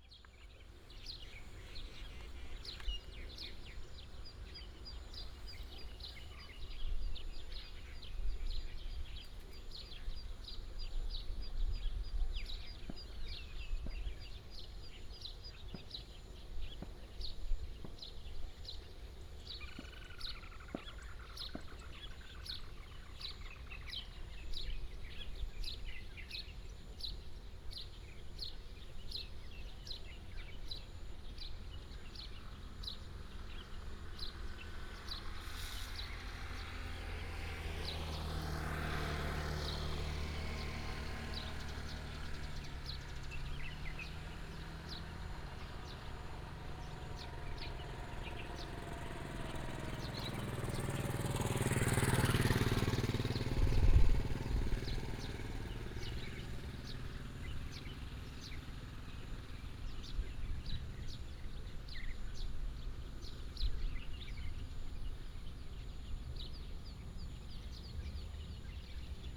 Morning in the farmland, Small rural, Village Message Broadcast Sound, Bird sound